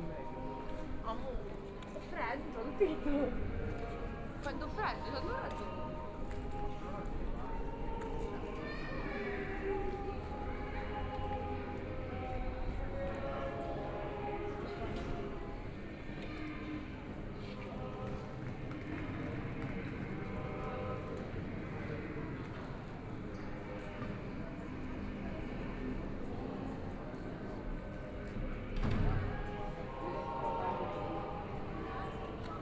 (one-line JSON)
{"title": "Marienplatz, Munich, Germany - soundwalk", "date": "2014-05-11 12:14:00", "description": "walking in the plaza", "latitude": "48.14", "longitude": "11.58", "altitude": "524", "timezone": "Europe/Berlin"}